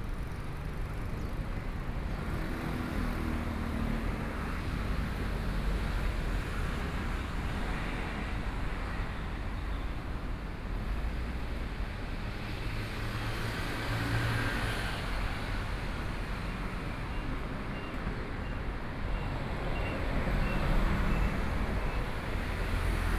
Walking through the streets, Traffic Sound, Walking towards the north direction